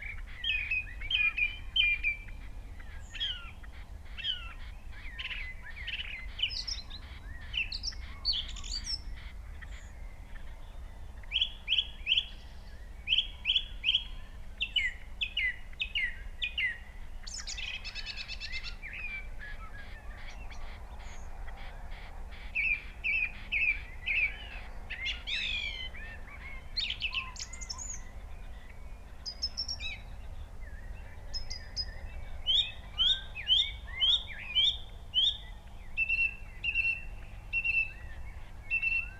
Green Ln, Malton, UK - song thrush soundscape ...
song thrush soundscape ... xlr mics in a SASS on tripod to Zoom H5 ... bird calls ... song ... from ... pheasant ... blackbird ... red-legged partridge ... grey partridge ... skylark ... crow ... tawny owl ... wood pigeon ... robin ... dunnock ... yellowhammer ... long-tailed tit ...plus background noise ... the skies are quiet ...
England, United Kingdom, 4 April 2020, 05:30